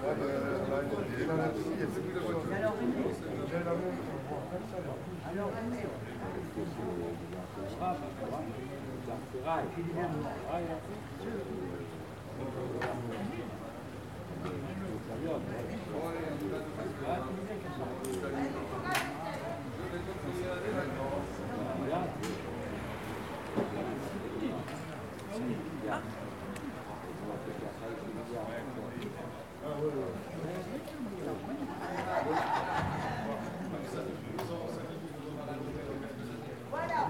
Villars-sur-Var, France - Relaxed weekend café ambience
Sitting in the square in Villars Sur Var, you can hear people sitting and talking outside the cafe to the right, and in the distance in the centre people standing and talking outside the church. You can also faintly hear a fountain, and occasional cars winding around the roads.
Recorded on a Zoom H4n internal mics.
20 February 2016